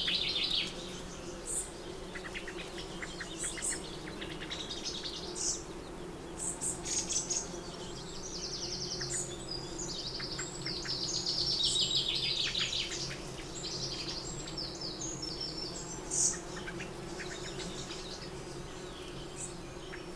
Green Ln, Malton, UK - lime tree buzzing ...
Lime tree buzzing ... bees ... hoverflies ... wasps ... etc ... visiting blossom on the tree ... open lavalier mics on T bar on telescopic landing net handle ... bird song and calls from ... wren ... blackbird ... chaffinch ... whitethroat ... blue tit ... fledgling song thrush being brought food by adult birds ... particularly after 18 mins ... some background noise ...